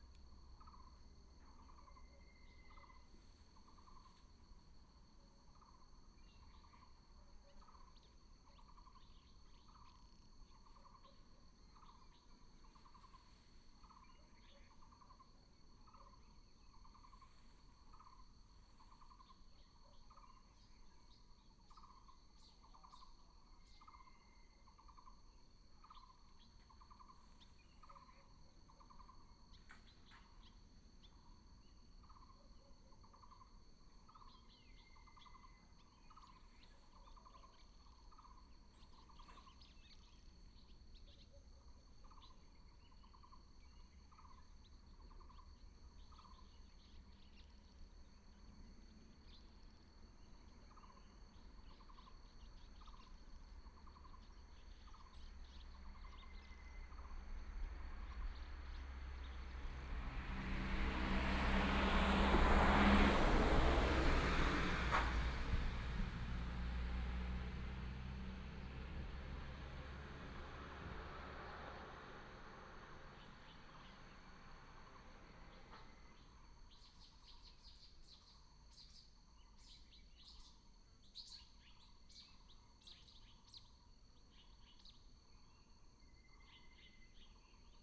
壽卡休憩亭, Daren Township, Taitung County - Bird and Traffic sound
Mountain road, Bird cry, Traffic sound
Binaural recordings, Sony PCM D100+ Soundman OKM II